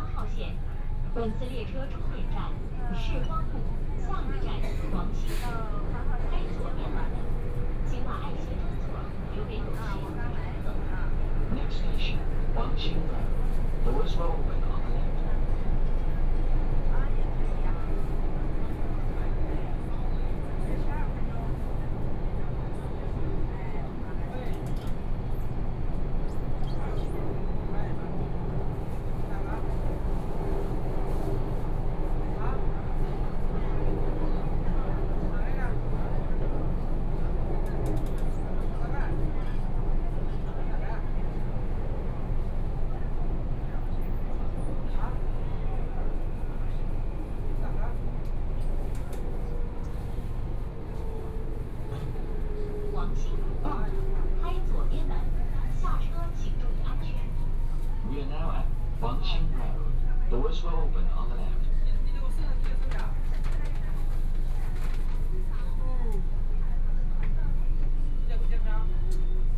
Yangpu District, Shanghai - Line 8 (Shanghai Metro)
from Siping Road station to Huangxing Road station, erhu, Binaural recording, Zoom H6+ Soundman OKM II
26 November, ~11:00